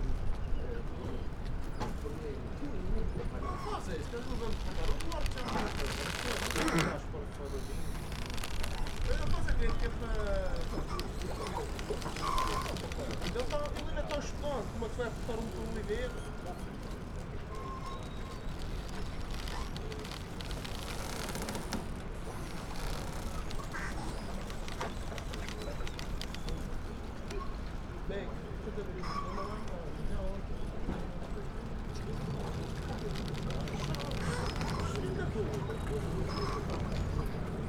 Funchal, marina - catamaran arrival
sound of a crunching rope holding a boat to the pier and of yacht hulls rubbing against each other. a catamaran arrives spewing out tourist from dolphin watching trip. the operators say thank you and good bay to the tourists.